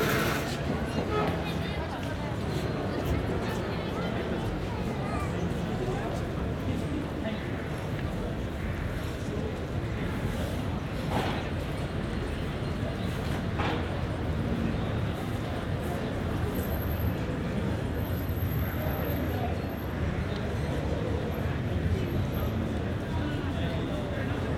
Istanbul Soundscape, Sunday 13:05 Galata Tower
Istanbul ambient soundscape on a Sunday afternoon at the Galata Tower plaza, binaural recording